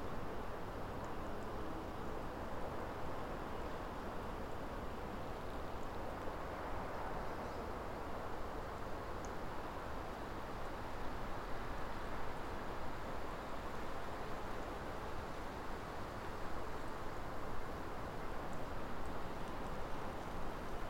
Woodcote, UK - Greenmore Ponds 10.30pm
The wind blowing through the birch woodland, distant train, plane and traffic noise predominate. Less obvious is the constant high pitch popping sound of bubbles on the surface of the pond. The occasional quacking of a duck, creaking of trees in the breeze and train whistle from the mainline down the hill in Goring and Pangbourne can also be heard. A car from Long Toll turns into Greenmore at the end of the recording. Tech notes: spaced pair of Sennheiser 8020s at head height recorded onto SD788T with no post-pro.